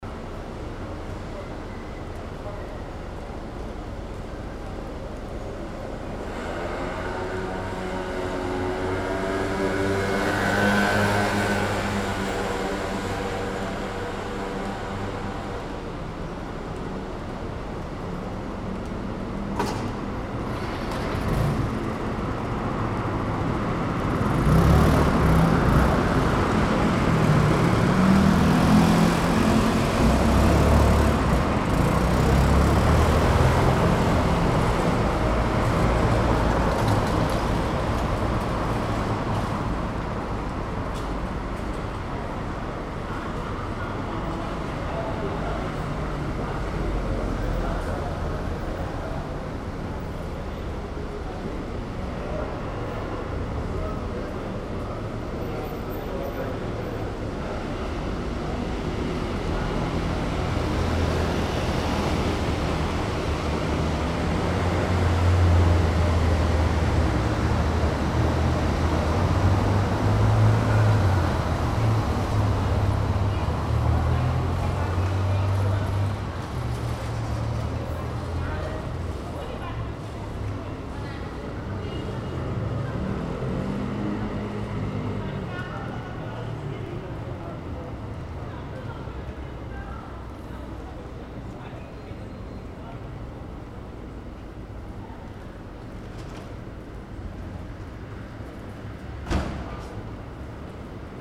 WLD, Bologna, Italy, traffic, noise, street